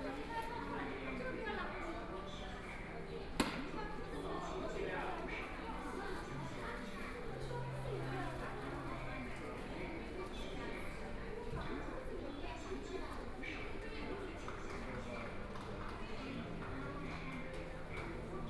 Laoximen Station, Shanghai - walk in the Station
Walking into the station from the ground, Then towards the platform waiting for the train, Binaural recordings, Zoom H6+ Soundman OKM II
Huangpu, Shanghai, China, 1 December, 13:00